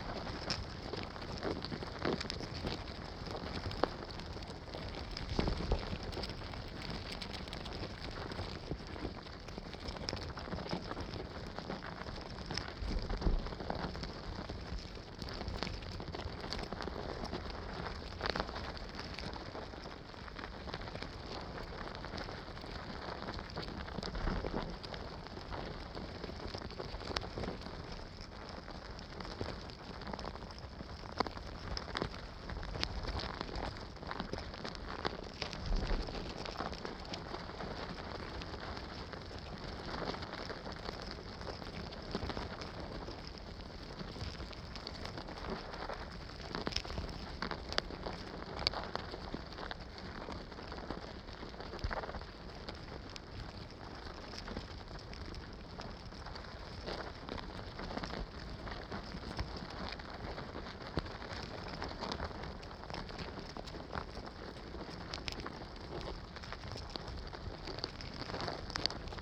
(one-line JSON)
{"title": "Wood ants nest, Vogelsang, Zehdenick, Germany - Wood ants explore contact mics placed on their nest", "date": "2021-08-25 15:57:00", "description": "Wood ants build impressively mountainous nests from forest debris. From it their paths into the surrounding forest radiate outwards in constant activity. Many immediately seethe over objects in the way, e.g. contact mics gently placed on their nest, which they quickly decide are no threat.", "latitude": "53.06", "longitude": "13.37", "altitude": "57", "timezone": "Europe/Berlin"}